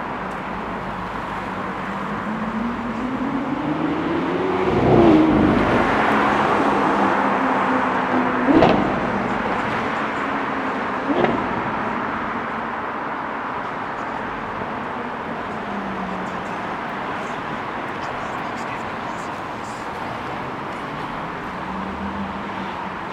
Queen's University, Belfast, UK - Queen’s University Belfast
Recording of vehicles passing, groups of friends chatting, passer-by, motorbike speeding, a person listening to radio or music, seagulls, emergency vehicle sirens, footsteps on bricks.